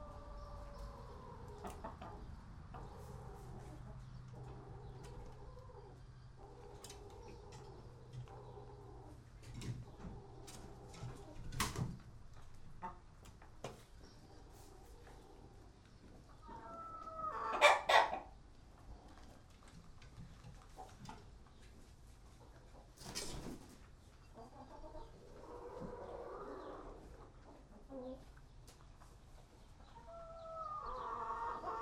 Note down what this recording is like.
WLD, Maybury State Park chicken coop